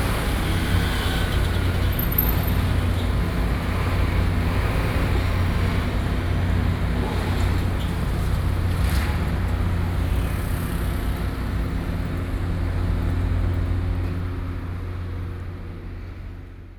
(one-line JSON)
{"title": "Guangming St., Xindian Dist. - Builder's construction", "date": "2012-11-07 08:25:00", "latitude": "24.96", "longitude": "121.54", "altitude": "30", "timezone": "Asia/Taipei"}